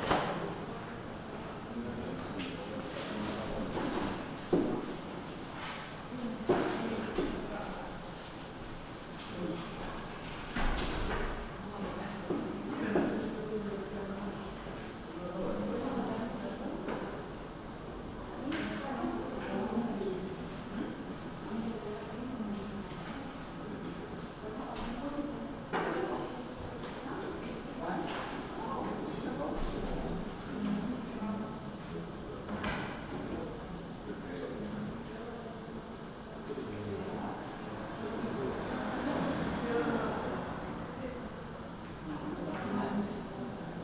Poznan, Wielkopolski Square, Passport office - waiting room
Poznań, Poland